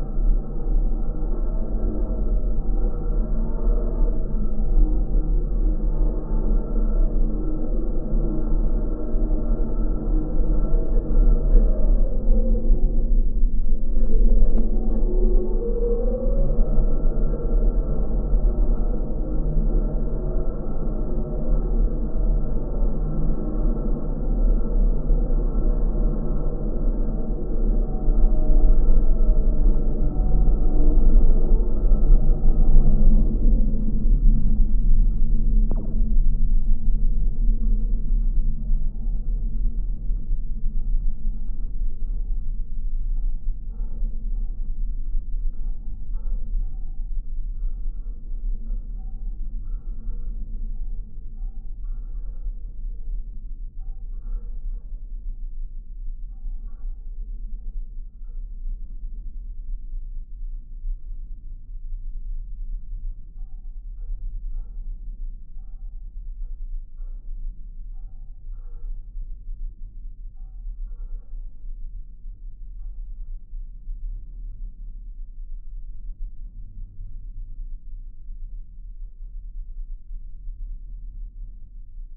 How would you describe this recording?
Wake park rope recorded with contact microphone